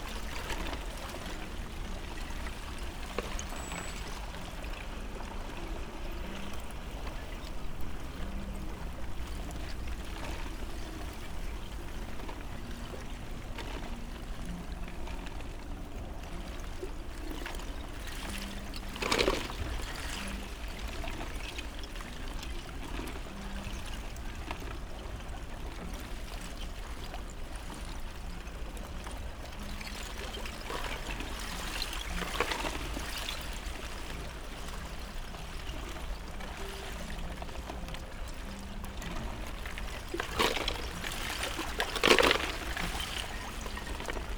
공지천 얼음 끝에서 floating ice edge ２１年１２月３１日
공지천 얼음 끝에서_floating ice edge_２１年１２月３１日